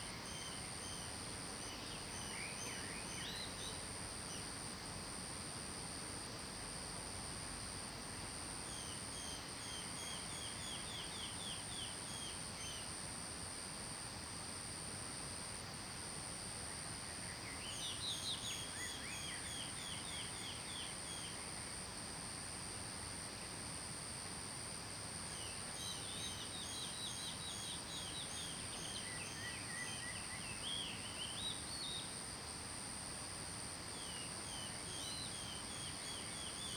Early morning, Birds singing
Zoom H2n MS+XY
Nantou County, Puli Township, 桃米巷11-3號, 17 September 2015